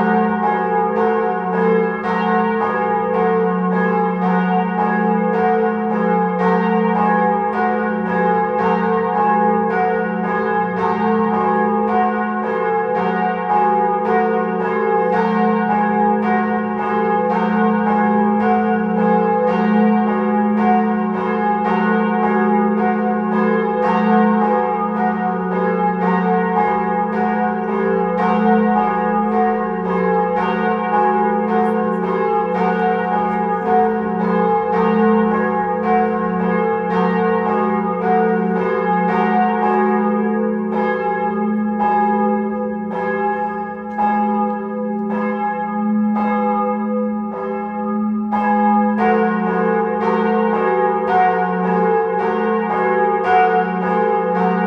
Church Kostel sc. Vojtecha, Prague - Church Bells at noon in Prague
Bells from the church at 12:00 on Sunday, recorded from the street.
Light wind sometimes.
Church Kostel sc. Vojtecha, Prague 1
Recorded by an ORTF setup Schoeps CCM4 x 2 on a Cinela Suspension + Windscreen
Sound Devices mixpre6 recorder
GPS: 50.078476 / 14.415440
Sound Ref: CZ-190303-002
Recorded during a residency at Agosto Foundation in March 2019